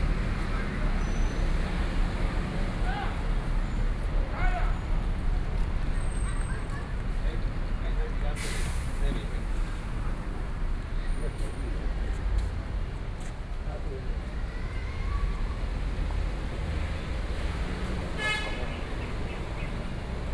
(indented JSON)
{
  "title": "Sec., Donghua St., Beitou Dist., Taipei City - Traffic noise",
  "date": "2012-11-03 10:14:00",
  "latitude": "25.11",
  "longitude": "121.52",
  "altitude": "10",
  "timezone": "Asia/Taipei"
}